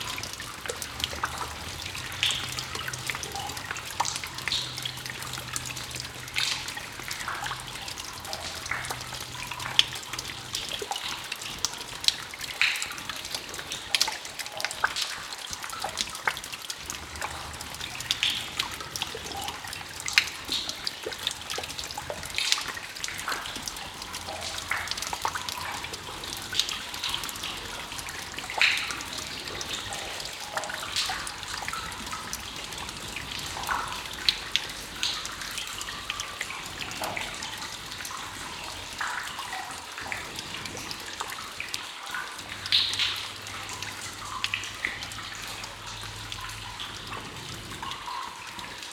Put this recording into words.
Innerhalb eines alten, ehemaligen Eisenbahntunnels. Der Klang von Wasser, das die Steinwände des dunklen und kalten Tunnels herunterläuft und tropft. Der Tunnel befindet sich direkt an der Belgischen Grenze und wurde über die Zeit ein Refugium seltener Arten von Fledermäusen und steht daher unter dem Schutz des Luxemburgischen Naturschutz Verbandes. Durchdiese Massnahme wird eine europäische Fahrradwegroute hier unterbrochen. Inside an old, former railway tunnel. The sound of water dripping down the stone walls inside the dark and cold tunnel. The tunnel is located directly at the Belgium border and has become a refuge for rare species of bats and is therefore protected by the nature conversation department of the gouverment of Luxembourg, which interrupts a european bicycle trail here.